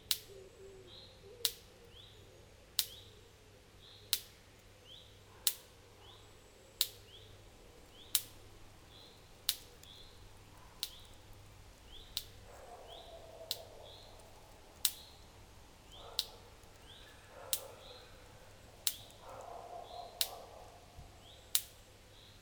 Genappe, Belgique - Electrical fence
During a walk in Genappe, I noticed a small problem in an electrical fence. A short circuit makes tac tac tac...
Genappe, Belgium, 16 July 2017, 15:10